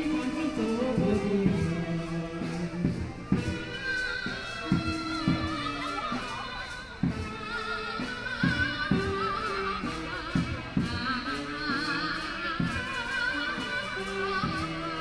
Heping Park, Shanghai - Saxophone performances
Saxophone performances, community groups, Binaural recording, Zoom H6+ Soundman OKM II